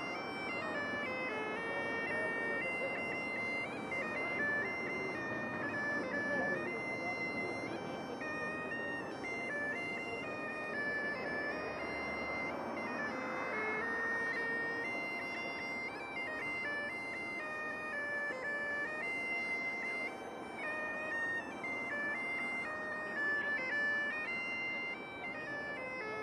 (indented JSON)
{
  "title": "North Dock, Dublin, Ireland - A bag pipe on my pocket",
  "date": "2014-03-17 16:51:00",
  "description": "A bagpipe player makes a pleasant pad that spruce up the Samuel Beckett bridge's soundscape a couple of hours after Saint Patrick's parade.\nOther points of this soundwalk can be found on",
  "latitude": "53.35",
  "longitude": "-6.25",
  "altitude": "6",
  "timezone": "Europe/Dublin"
}